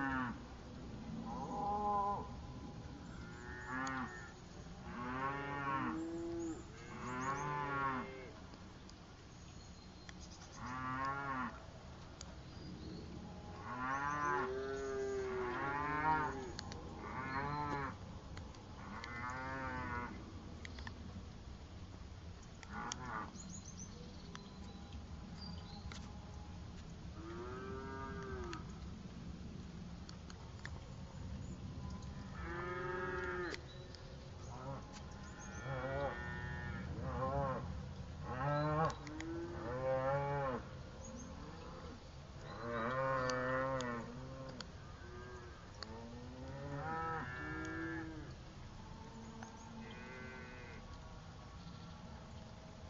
Märkische Schweiz, Dinosaurs roaring
Early that morning a prehistoric atavism woke me up. Dinosaurs, right here, out on the meadows.
Müncheberg, Germany